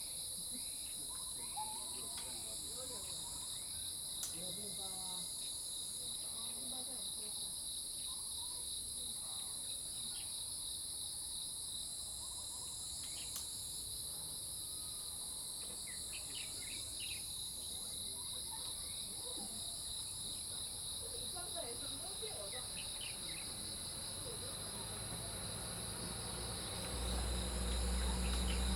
金龍山日出平台, 魚池鄉 - birds and Insect sounds
birds and Insect sounds
Zoom H2n MS+XY